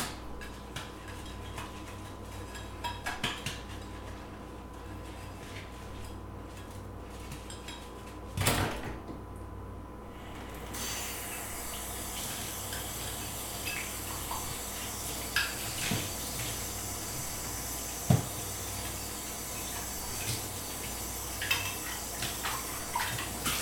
A day in the building's kitchen.